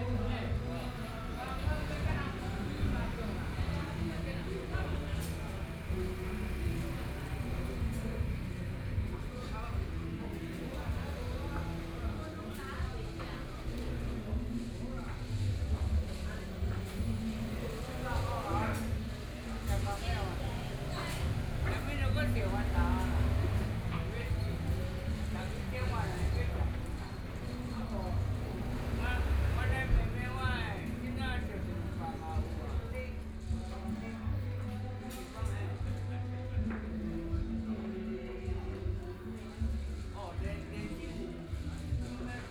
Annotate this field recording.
Activity center for the elderly in the community, Entertainment elderly, Binaural recordings, Zoom H4n+ Soundman OKM II